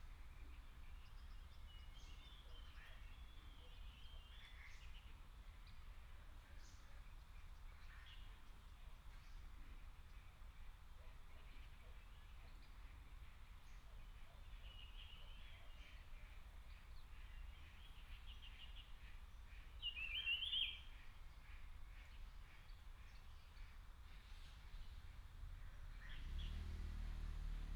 草楠濕地, 南投縣埔里鎮桃米里 - Bird calls
Bird sounds, Morning in the wetlands